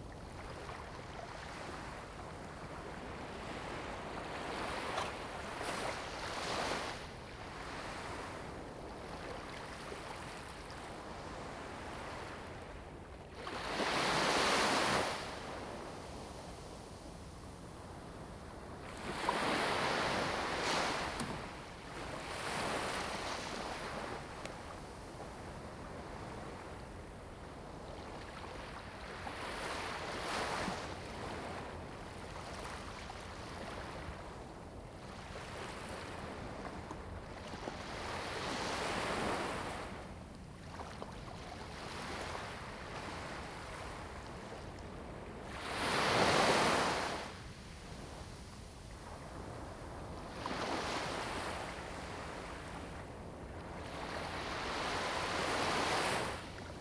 隘門沙灘, Huxi Township - In the beach
In the beach, Sound of the waves
Zoom H2n MS +XY
21 October 2014, 08:13